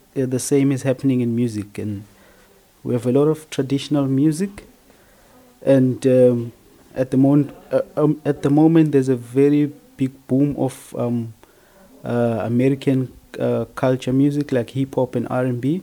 FUgE, Hamm, Germany - Lungi tells about teaching music…
And here is one of the interviews in English recorded during the same workshop. Sabnam from Bangladescg interviews Lungi from South Africa.
The complete playlists is archived here: